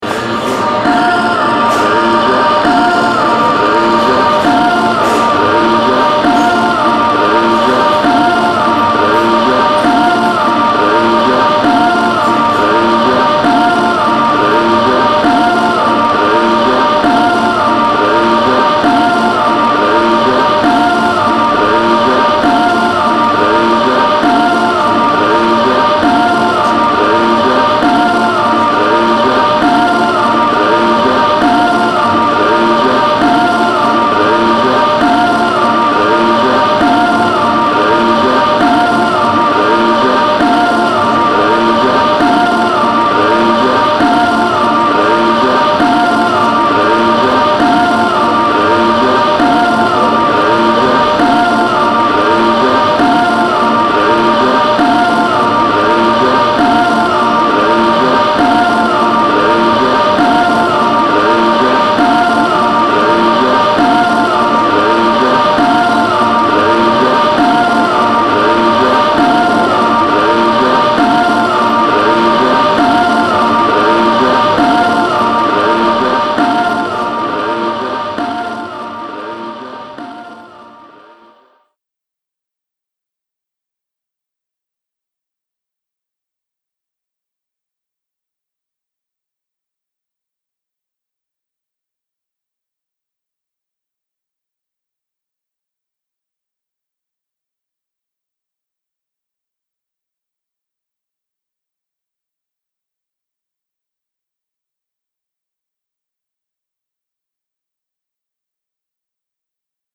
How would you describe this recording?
At the cologne ART fair. A record loop sound installation about a jewish singer. soundmap rw - art places, social ambiences and topographic field recordings